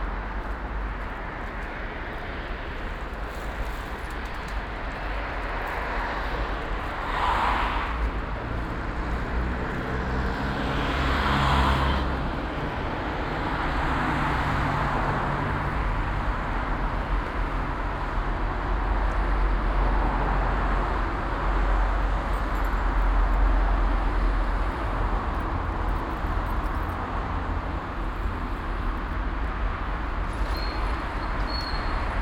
Grasweg, Kiel, Deutschland - Binaural soundwalk Kiel, Germany
Binaural soundwalk in Kiel, Germany, 2021-04-06, pushing my bicycle from Grasweg to Gutenbergstraße, turned right to Eckernförder Straße, turned right following Eckernförder Straße for 1.7 km ending in an underground parking. Mostly traffic noise, @05:30 a very short and light hailstorm, occasionally slight wind rumble (despite wind protection), pedestrians and cyclists, birds (gull, black bird). Zoom H6 recorder, OKM II Klassik microphone with A3 power adapter and wind shield earmuffs.
Schleswig-Holstein, Deutschland, 6 April